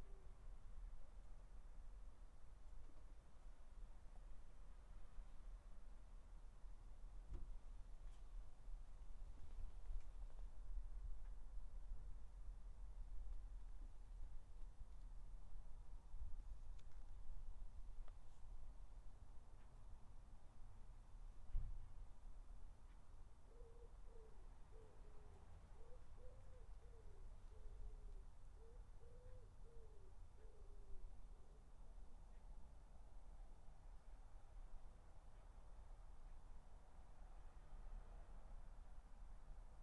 Dorridge, West Midlands, UK - Garden 13
3 minute recording of my back garden recorded on a Yamaha Pocketrak
Solihull, UK, 13 August